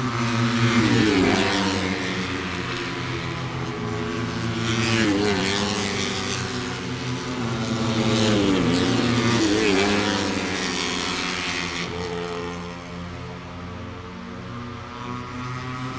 Moto three ... Free practice one ... International Pit Straight ... open lavalier mics on T bar ...